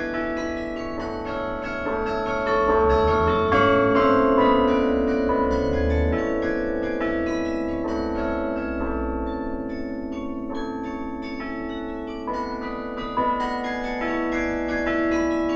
Deinze, Belgique - Deinze carillon
The very great Deinze carillon, played by Charles Dairay. It's a special instrument, sounding like a children's toy. It's because these are special bells, rare and astonishing : major bells.